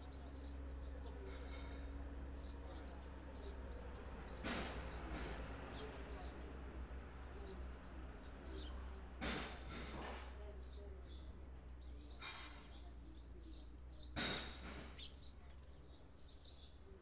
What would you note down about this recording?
Sitting under the metal shelter on Platform 1 at 7am. Baby sparrows making a noise, two women chatting about 20 feet to the right. Builders are working on the Brewery Square development behind; you can hear the machinery, banging, reversing beeps. A train from London Waterloo to Weymouth pulls up at platform 2, then leaves.